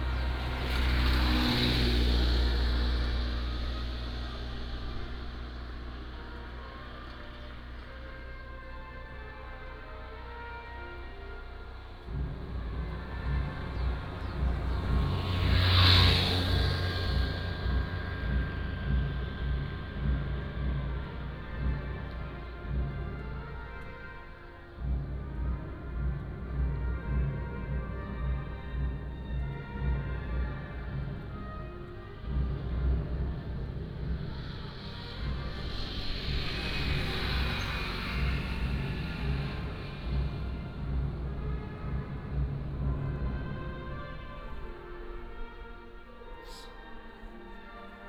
湖西村, Huxi Township - on the Road
In the street, Close to schools, Traffic Sound, Came the voice of the school orchestra
Husi Township, 202縣道, 21 October 2014, ~13:00